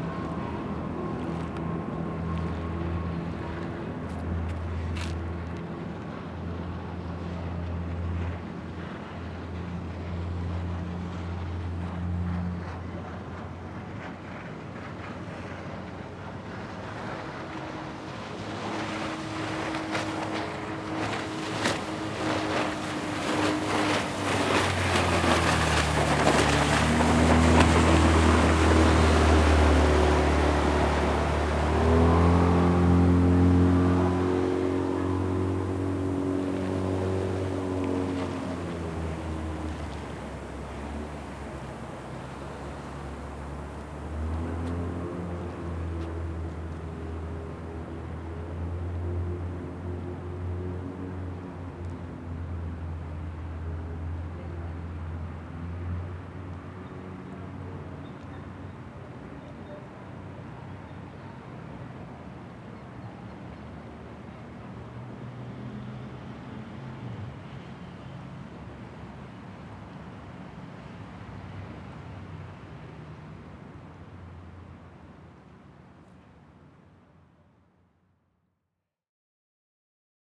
In Venice at the water side near the station in the vening - the sounds of boats and trains passing by
intternational soundscapes
ambiences and art environments